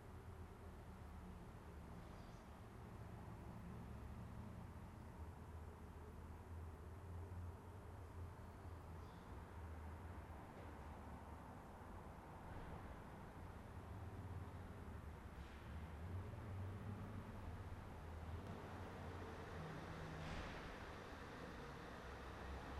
Berliner Vorstadt, Potsdam, Deutschland - Garage